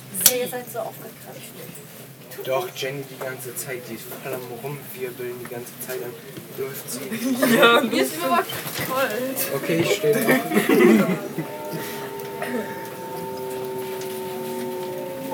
{
  "title": "Moabit, Berlin, Deutschland - lehrter bahnhof",
  "date": "2016-03-07 17:35:00",
  "latitude": "52.52",
  "longitude": "13.37",
  "altitude": "32",
  "timezone": "Europe/Berlin"
}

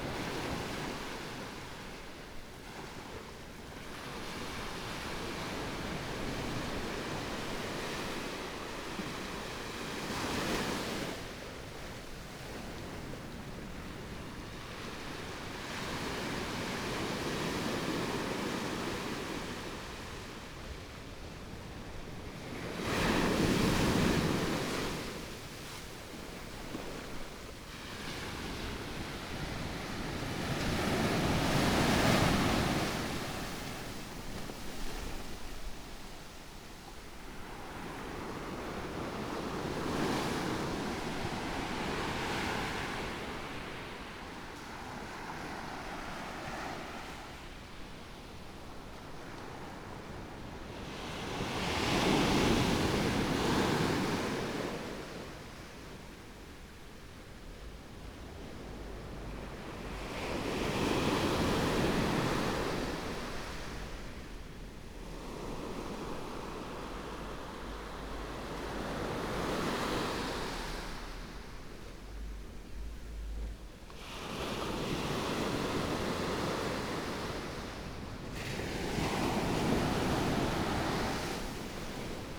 {
  "title": "Beibin Park, Hualien City - Sound waves",
  "date": "2014-02-24 13:29:00",
  "description": "Sound waves\nPlease turn up the volume\nBinaural recordings, Zoom H4n+ Soundman OKM II + Rode NT4",
  "latitude": "23.98",
  "longitude": "121.62",
  "timezone": "Asia/Taipei"
}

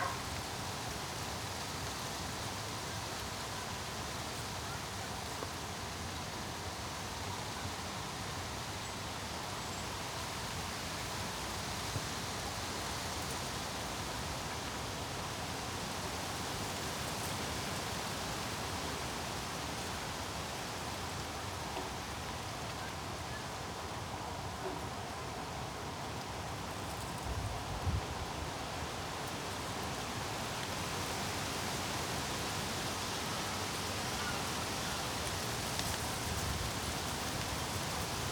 {
  "title": "Tempelhofer Feld, Berlin, Deutschland - summer afternoon",
  "date": "2018-08-12 14:20:00",
  "description": "place revisited. it sounds like autumn, also because nature suffers from the drought this summer\n(Sony PCM D50, Primo EM172)",
  "latitude": "52.48",
  "longitude": "13.40",
  "altitude": "42",
  "timezone": "GMT+1"
}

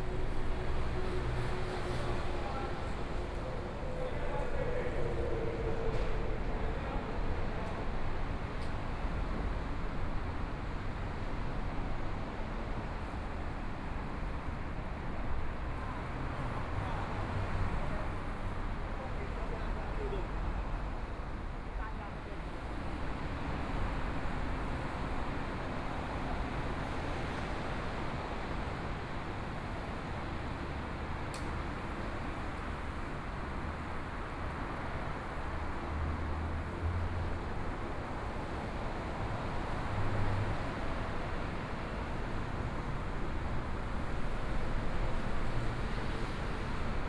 {
  "title": "大直橋, Taipei city - Traffic Sound",
  "date": "2014-02-16 17:34:00",
  "description": "Traffic Sound\nBinaural recordings, ( Proposal to turn up the volume )\nZoom H4n+ Soundman OKM II",
  "latitude": "25.07",
  "longitude": "121.54",
  "timezone": "Asia/Taipei"
}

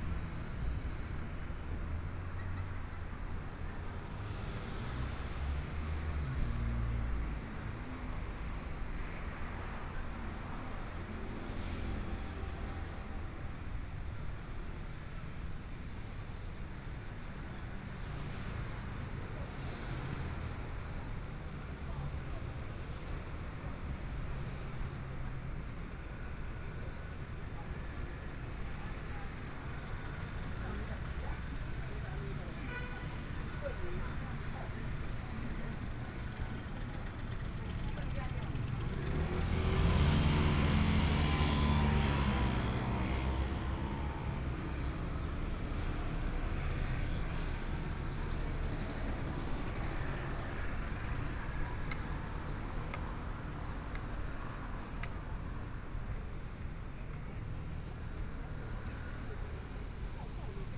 {
  "title": "Zhongzheng Rd, Hualien City - on the Road",
  "date": "2014-02-24 15:22:00",
  "description": "walking on the Road, Traffic Sound, Various shops voices\nBinaural recordings\nZoom H4n+ Soundman OKM II",
  "latitude": "23.98",
  "longitude": "121.61",
  "timezone": "Asia/Taipei"
}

